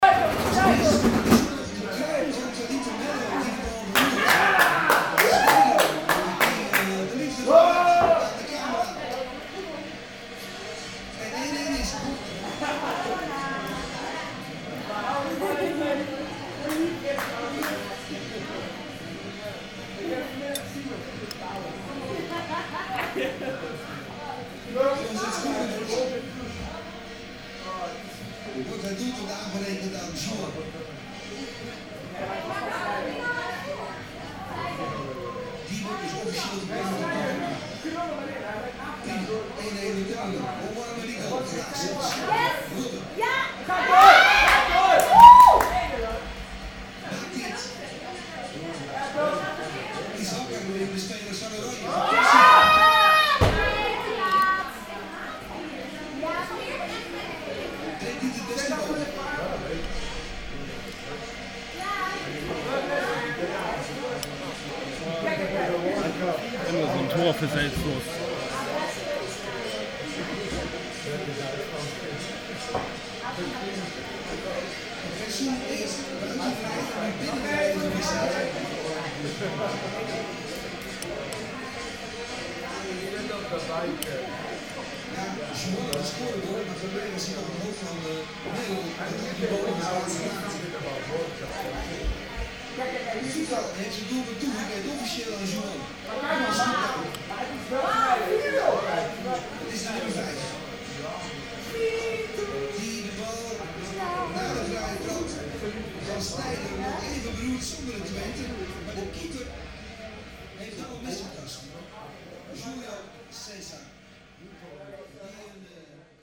amsterdam, marnixstraat, melkweg cafe
at the melkweg cafe in the afternoon, watching the soccer wm quarterfinal with a group of dutch fans - shouts and screams of pleasure at the end of the game
city scapes international - social ambiences and topographic field recordings